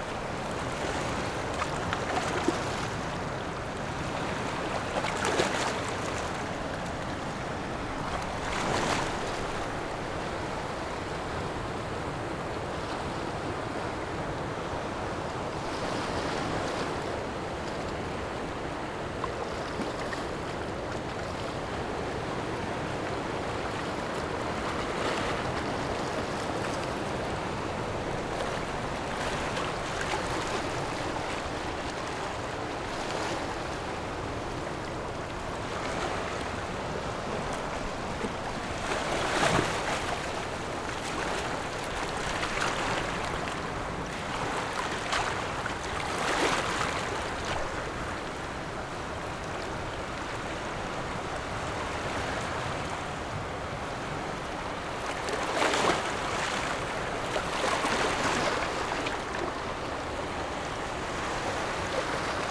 Standing on the rocks, Sound of the waves, In the beach, Hot weather
Zoom H6 MS+ Rode NT4